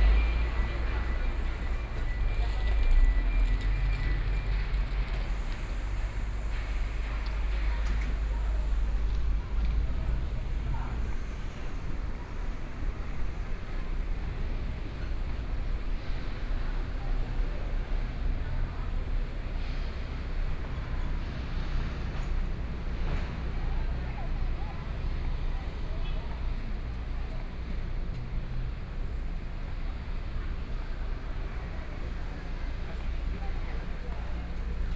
Neipi Rd., Su'ao Township - soundwalk
Next to the pier, Through a variety of small factories, Into the Fish Market, Traffic Sound, Hot weather
Suao Township, Yilan County, Taiwan, July 2014